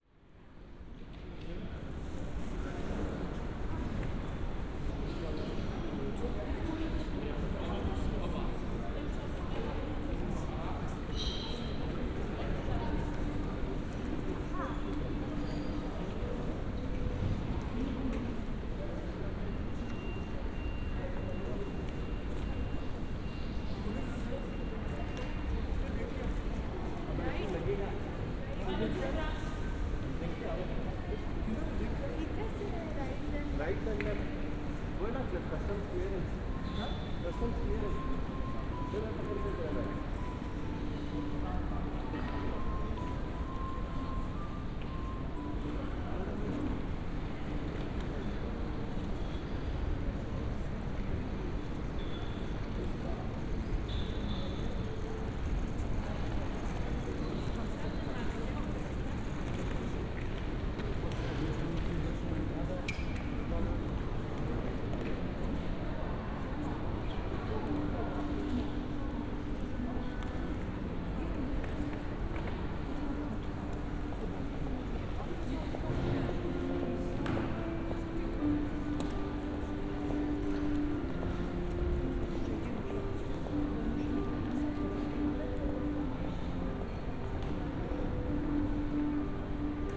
(binaural) ambience at newly opened departure hall at the Okecie airport. Recorded above check in stands form an mezzanine. Relaxing piano music stabbed by an alarm. a few passengers rushing towards security. (sony d50 + Luhd PM-01's).